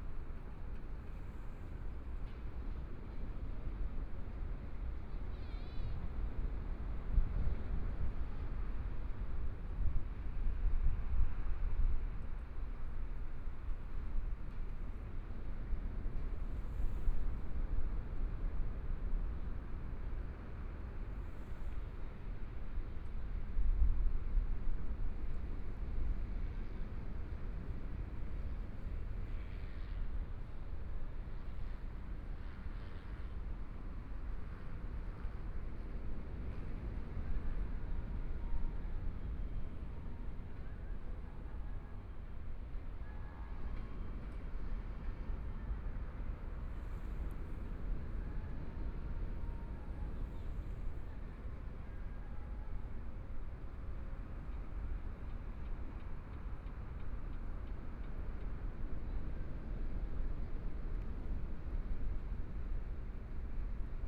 馬卡巴嗨公園, Taitung City - Evening sea
In the sea embankment, Sound of the waves, Dogs barking, Traffic Sound Binaural recordings, Zoom H4n+ Soundman OKM II
Taitung County, Taiwan, 15 January 2014